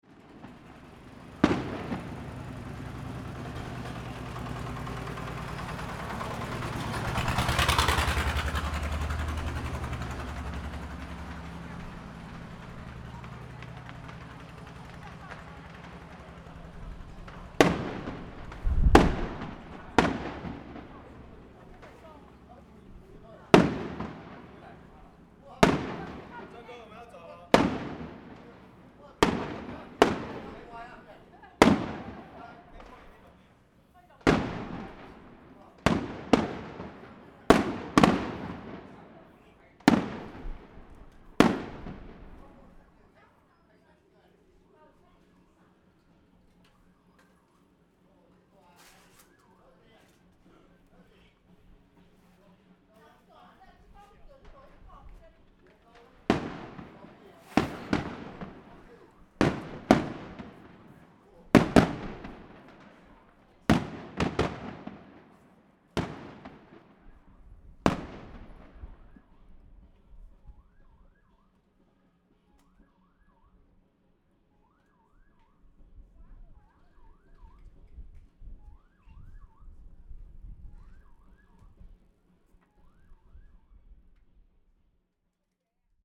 Fireworks sound, On the streets of a small village
Zoom H6 MS
Changhua County, Taiwan